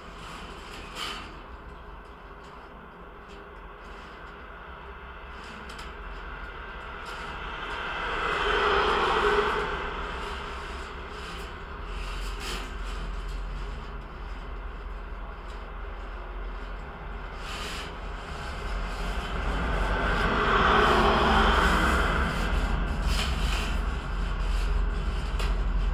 Margirio g., Ringaudai, Lithuania - Metal plate fence
A four contact microphone recording of a brand new metal plate fence. Sounds of traffic resonate throughout the fence, as well as some tree branches brushing against it randomly. Recorded with ZOOM H5.